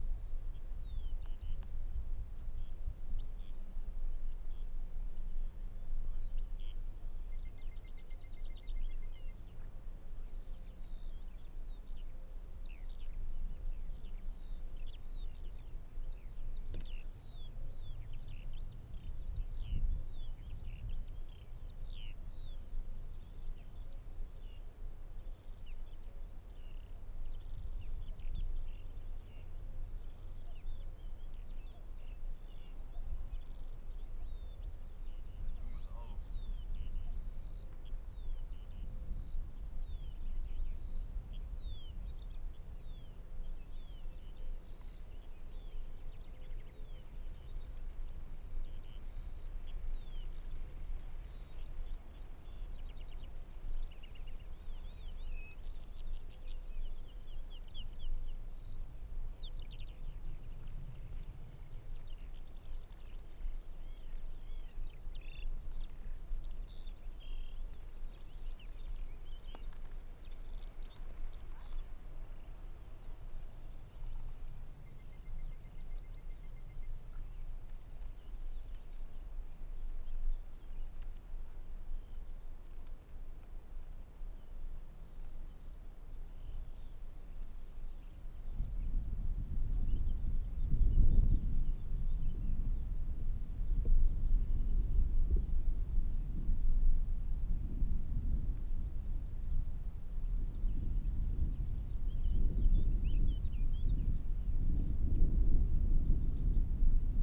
Nationale Park Hoge Veluwe, Netherlands - Hei
Soundfield mic (blumlein decode) Birds, wind, military aeroplane